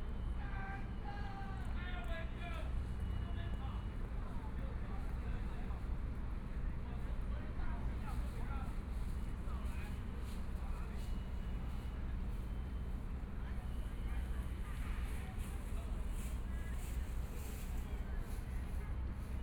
in the park, Traffic Sound, Aircraft flying through, Jogging game, Binaural recordings, ( Keep the volume slightly larger opening )Zoom H4n+ Soundman OKM II
15 February 2014, 15:30